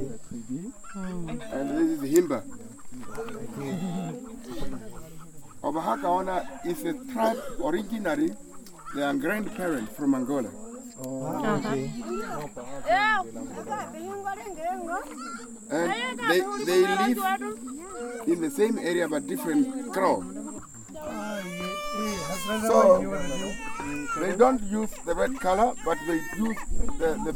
Young Himba woman singing, and Himba guide introducing us to the people.